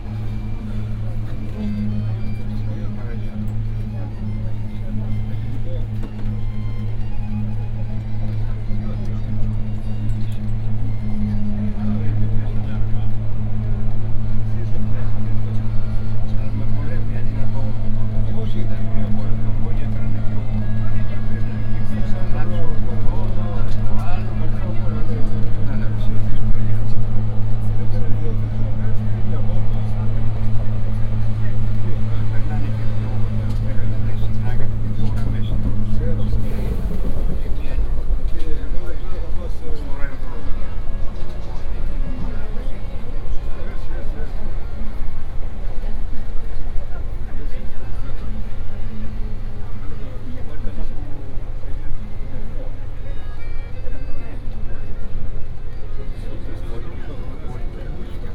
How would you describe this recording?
Binaural recording of a ride with M1 line from Petralona to Monastiraki. Recorded with Soundman OKM + Sony D100